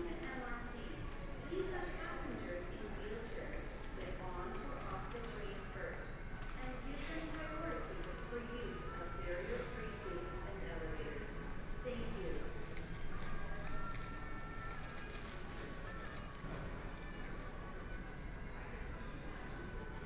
Guandu Station, Taipei City - In the Station
In the station platform, Children crying, Sound broadcasting, The distant sound of firecrackers, Train stops
Binaural recordings, Sony PCM D50 + Soundman OKM II
22 April, 15:38